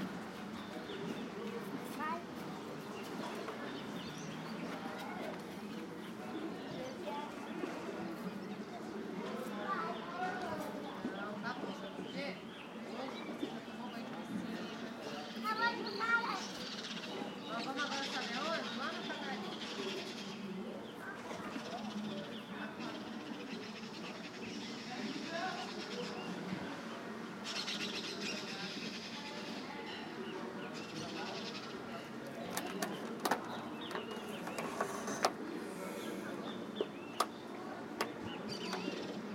lago paranoá, brasília, brazil
sounds in a lake paranoá
Brasília - Brazilian Federal District, Brazil, 27 June